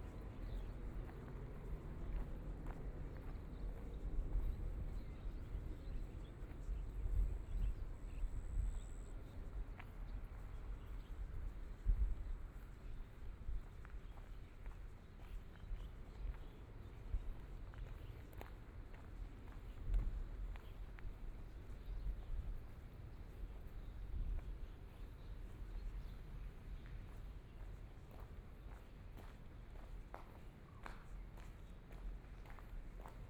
Taitung City, Taiwan - Walking along the river
Walking along the river, Fighter flight traveling through, Zoom H6 M/S